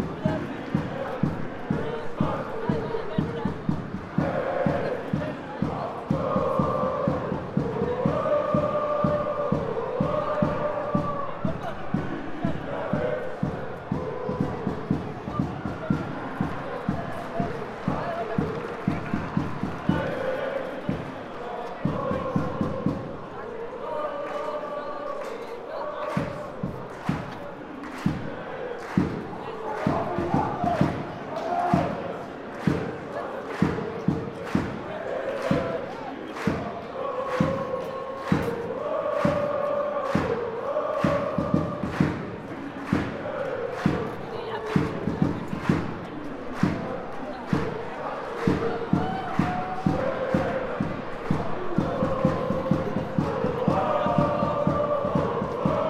Randers NV, Randers, Danmark - Supporters chanting
At the end, the home team manage to score, and a loud roar comes from the home teams supporters. Enjoy
Randers NV, Denmark, 26 April 2015